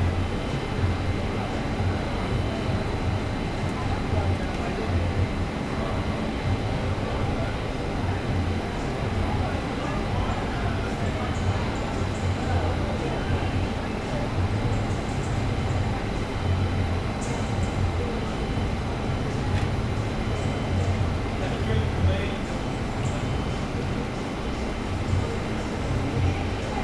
neoscenes: backstage door, Angel Place
Sydney NSW, Australia